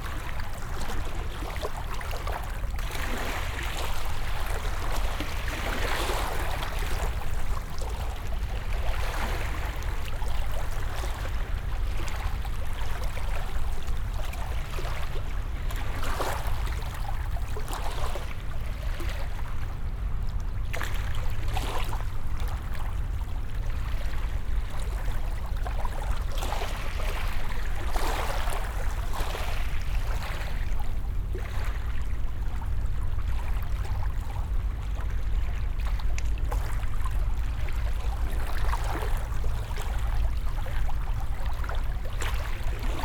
Unnamed Road, Croton-On-Hudson, NY, USA - Beachplay-Croton Point
You are listening to Hudson's playful waves in a cove on Croton Point on a sunny November morning. An intense machine world, despite the great distance, is always palpable.
26 November 2019, 08:34